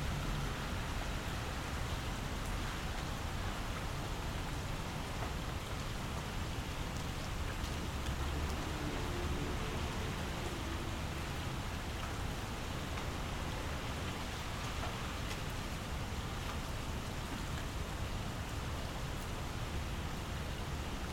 {"title": "Ave, Ridgewood, NY, USA - Heavy Rain in Ridgewood", "date": "2021-05-08 22:00:00", "description": "Heavyrain in Ridgewood, Queens.", "latitude": "40.70", "longitude": "-73.90", "altitude": "27", "timezone": "America/New_York"}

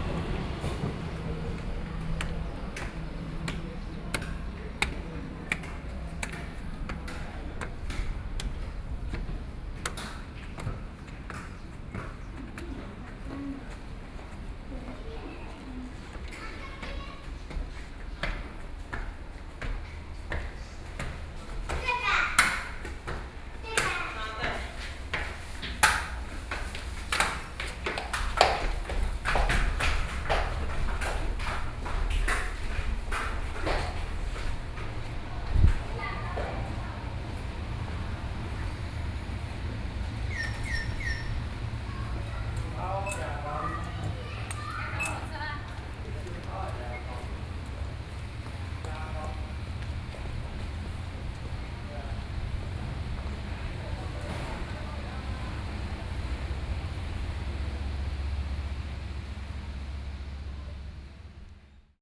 {"title": "Yangmei Station - Soundwalk", "date": "2013-08-14 14:45:00", "description": "Slowly out of the station from the platform, Sony PCM D50 + Soundman OKM II", "latitude": "24.91", "longitude": "121.15", "altitude": "155", "timezone": "Asia/Taipei"}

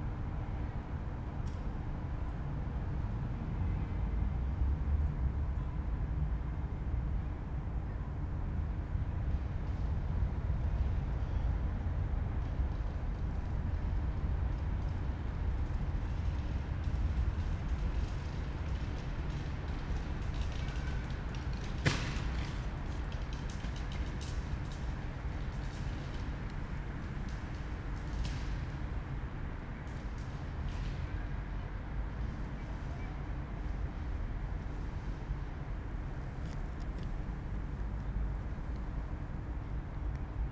{"title": "Mollstr. / Keibelstr., Berlin, Deutschland - downtown residential area, evening yard ambience", "date": "2021-09-09 21:10:00", "description": "building block between Mollstr and Keibelstr, Berlin, inner yard, late summer evening, darkness, some voices, a siren very loud, people walking dogs, distant traffic noise, redundant\n(Sony PCM D50, Primo EM172)", "latitude": "52.53", "longitude": "13.42", "altitude": "42", "timezone": "Europe/Berlin"}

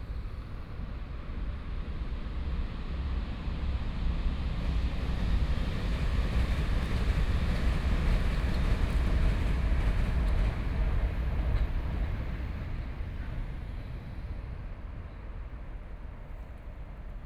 {"title": "Dongshan Township, Yilan County - The town's voice", "date": "2013-11-08 10:06:00", "description": "The square outside the station area, The town's environmental sounds, Train traveling through, Binaural recordings, Zoom H4n+ Soundman OKM II", "latitude": "24.64", "longitude": "121.79", "altitude": "6", "timezone": "Asia/Taipei"}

